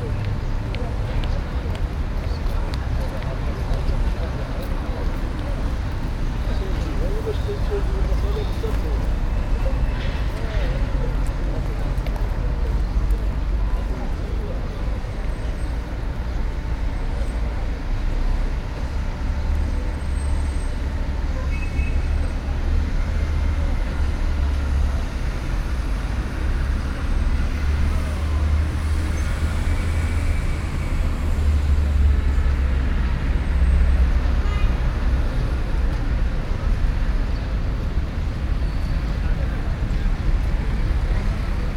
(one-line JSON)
{"title": "Tanger, Place du 9 Avril 1947", "date": "2011-04-04 11:40:00", "latitude": "35.78", "longitude": "-5.81", "timezone": "Africa/Casablanca"}